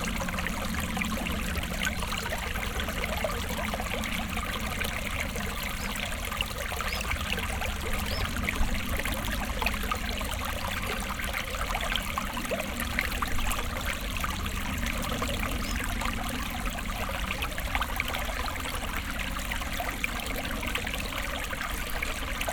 A small stream with nice sparkling water sounds flowing thru the small town. In the end a tractor passes the nearby bridge.
Lellingen, kleiner Bach und Traktor
Ein kleiner Bach mit schönem sprudelnden Wasser, der durch die kleine Ortschaft fließt. Am Ende fährt ein Traktor über die nahe Brücke.
Lellingen, petit ruisseau et tracteur
Un petit ruisseau avec le doux bruit de l’eau coulant à travers la petite ville. A la fin, un tracteur passe sur le pont tout proche.
Project - Klangraum Our - topographic field recordings, sound objects and social ambiences
Lellingen, Luxembourg, 3 August 2011